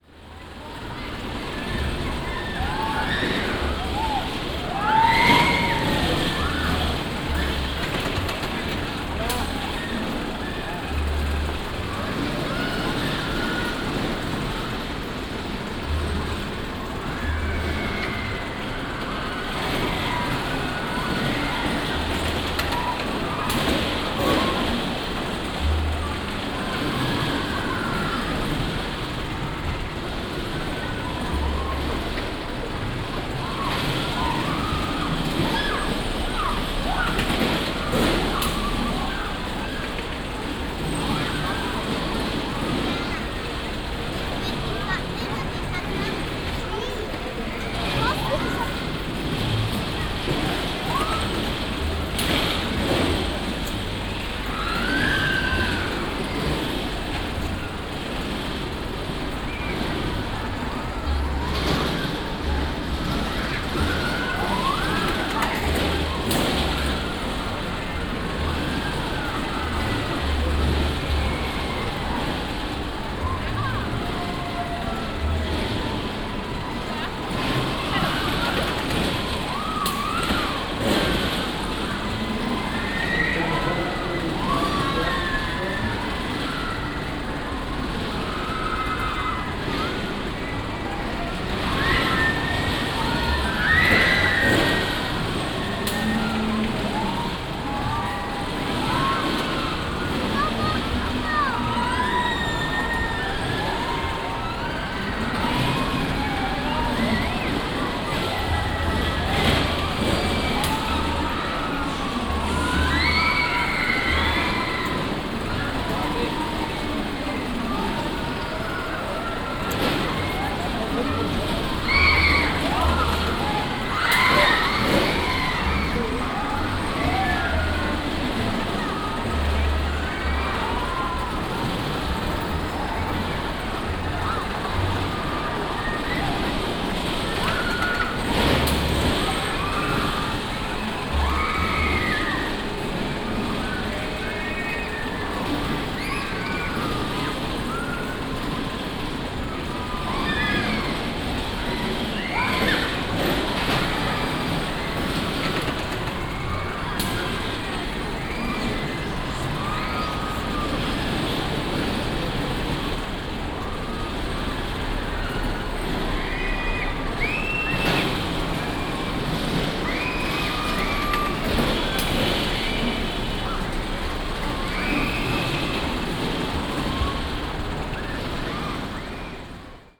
Berlin, Dircksenstr. - christmas fun fair rollercoaster
christmas market fun fair, small rollercoaster called Wilde Maus.
Berlin, Deutschland